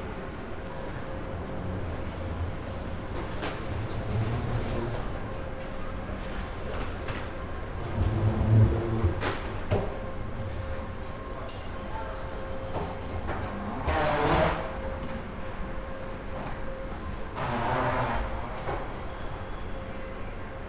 The Squaire, Frankfurt (Main) Flughafen - walking in the hall
Frankfurt airport, the Squaire business area, walking through the hall down to the ICE station
(Sony PCM D50, Primo EM172)